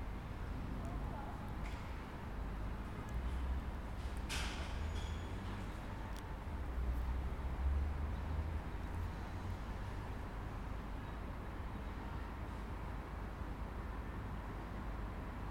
Unit 1410/149 Cope St, Waterloo NSW, Australia - Reggae
Recorded with Zoom pro mic, residents walking alone Cope St